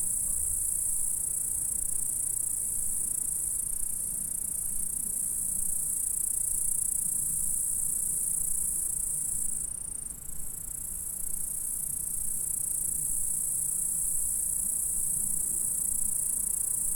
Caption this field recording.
Stridulations dans la prairie.